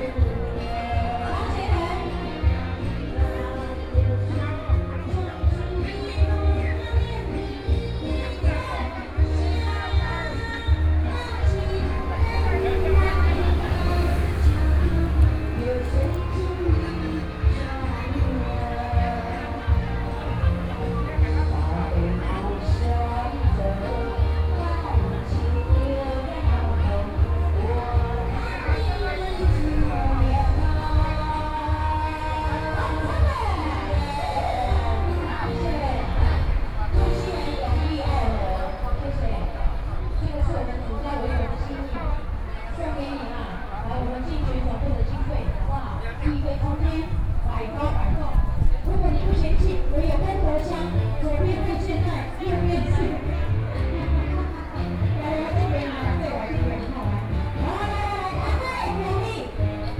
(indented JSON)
{
  "title": "東河村, Donghe Township - Wedding Banquet",
  "date": "2014-09-06 13:31:00",
  "description": "Wedding Banquet, The weather is very hot",
  "latitude": "22.97",
  "longitude": "121.31",
  "altitude": "28",
  "timezone": "Asia/Taipei"
}